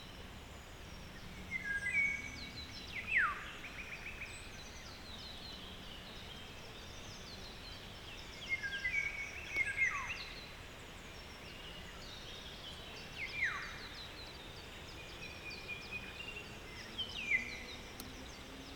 Schönhausen, Elbe, Kolonnenweg - floodplain ambience
ambience at Elbe river floodplain, birds and white noise from leaves in the wind. this was the former border to east germany, all the cart tracks are made for up to 40 tons of weight, for tanks and other military use.
(SD702, Audio Technica BP4025)
Schönhausen (Elbe), Germany